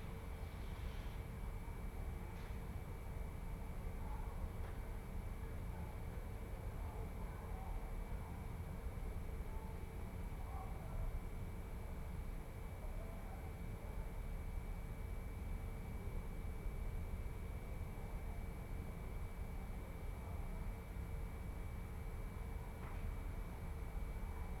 Ascolto il tuo cuore, città. I listen to your heart, city. Several chapters **SCROLL DOWN FOR ALL RECORDINGS** - Round midnight 4’33 almost silence” Soundscape
"Round midnight 4’33" almost silence” Soundscape
Chapter XLVIII of Ascolto il tuo cuore, città, I listen to your heart, city
Friday April 17th - Saturday 18nd 2020. Fixed position on an internal terrace at San Salvario district Turin, thirty eight/thirty nine days after emergency disposition due to the epidemic of COVID19. Same position as previous recording.
Start at 11:57:49 p.m. end at 00:02:12 a.m. duration of recording 4'33''.
Torino, Piemonte, Italia, 17 April 2020